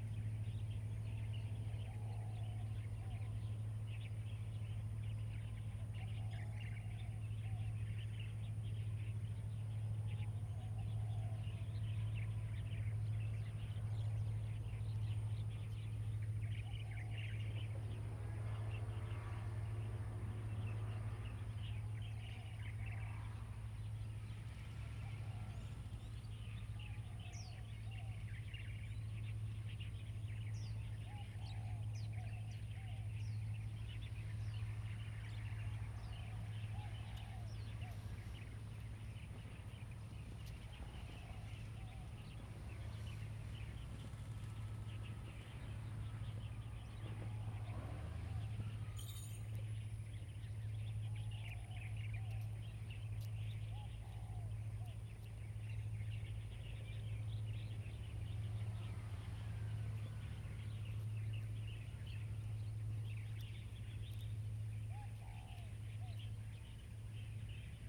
Hsiao Liouciou Island, Pingtung County - Birds singing
at the Heliport, Birds singing, Chicken sounds, Traffic Sound
Zoom H2n MS +XY